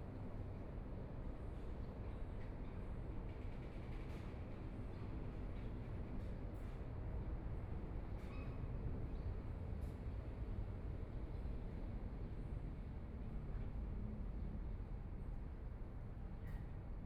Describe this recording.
Sitting in the park, The distant sound of airport, Traffic Sound, Aircraft flying through, Binaural recordings, Zoom H4n+ Soundman OKM II